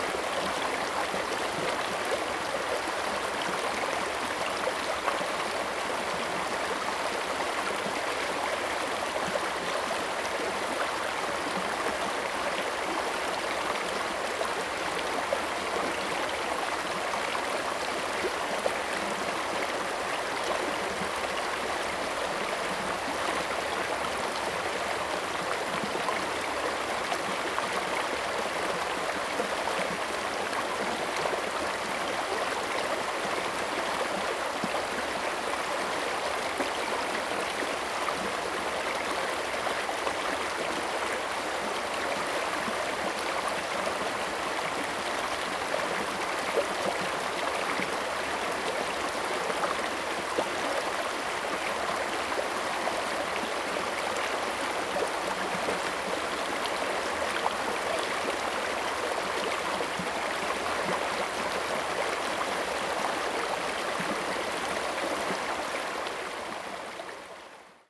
中路坑溪, 埔里鎮桃米里 - Flow
Bird calls, Brook, Flow
Zoom H2n MS+XY
26 August 2015, ~17:00